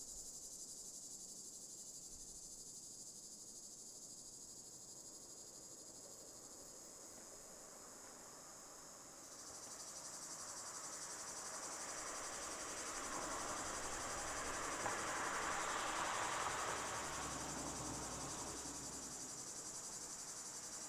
Melnice, Croatia - Sunday Melnice
AKG C414XLS - Blumlein